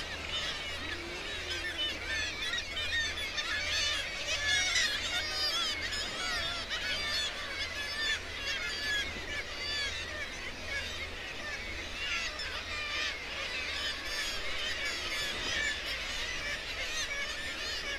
Bempton, UK - Kittiwake soundscape ...
Kittiwake soundscape ... RSPB Bempton Cliffs ... kittiwake calls and flight calls ... gannet and guillemot calls ... lavalier mics on T bar on the end of a fishing landing net pole ... warm ... sunny morning ...